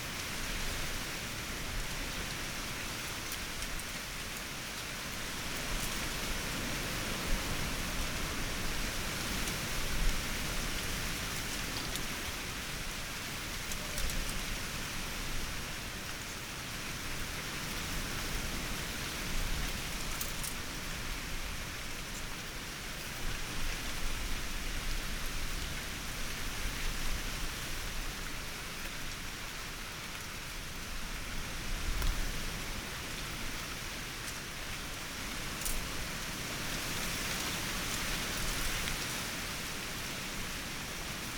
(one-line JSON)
{"title": "Patmos, Vagia, Griechenland - Schilf im Wind", "date": "2003-05-11 14:41:00", "description": "Das Schilf wurde ende 2016 zerstört.\nMai 2003", "latitude": "37.35", "longitude": "26.57", "altitude": "3", "timezone": "Europe/Athens"}